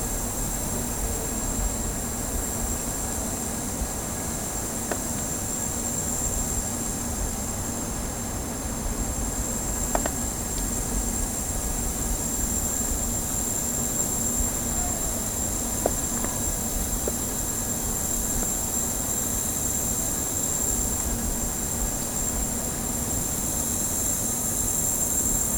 Recorded on Zoom H4n + Rode NTG 1, 15.10. 2015 around 10pm.